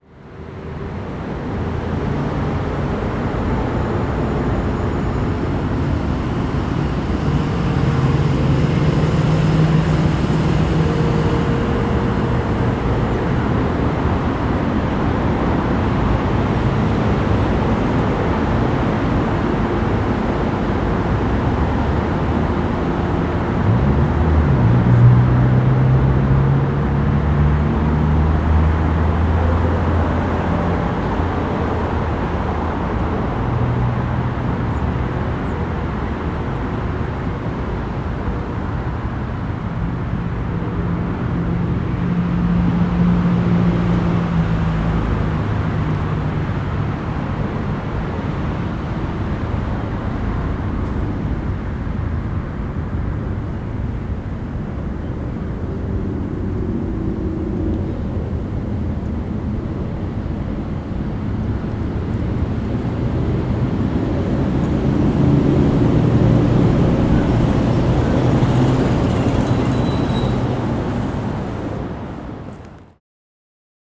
Hamilton Park, Allentown, PA, USA - South Muhlenberg Street
Here you can hear the constant traffic that passes through Hamilton Street, however, you can still hear the Muhlenberg Bell toll even at this distance from the bell tower.